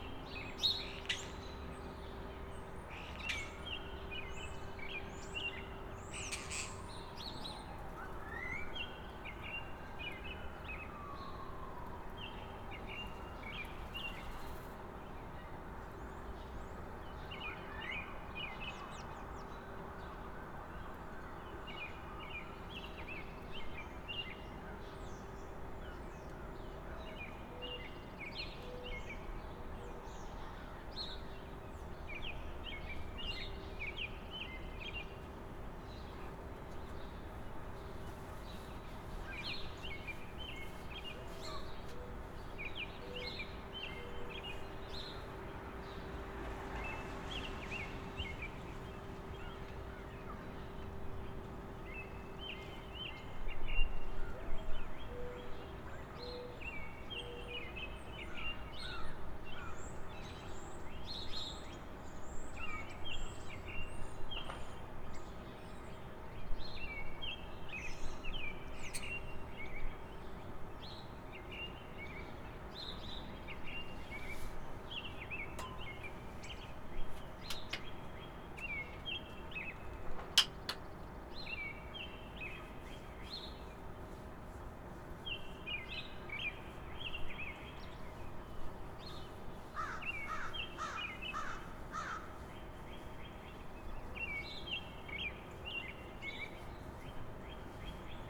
The microphone is a Sennheiser mono shotgun mic, positioned in the window of a third floor attic of a house in Peterborough, Ontario, Canada. Peterborough is a small city located between Toronto and Ottawa with a long history of working class manufacturing jobs, and more recently the city has been strongly influenced by two post-secondary institutions. The neighbourhood where the microphone is positioned is just adjacent to downtown Peterborough and is known as The Avenues. It was initially built as a suburb to house the workers employed at the General Electric manufacturing facility. The facility is now a nuclear processing plant, and the neighbourhood has evolved to house a mix of tenants and homeowners – from students renting homes to the middle and working classes.
This microphone picks up lots of sounds of residential life – the sounds of heating exhaust from houses, cars and trucks coming and going, and people going about their day.